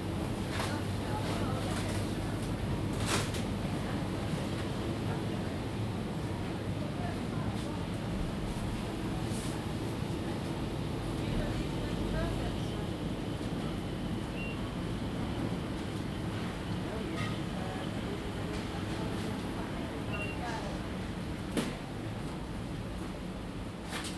Waitrose, Bressenden Place, London - Wandering around Waitrose.
I walked around the store to pick up a variety of sounds. Recorded on a Zoom H2n.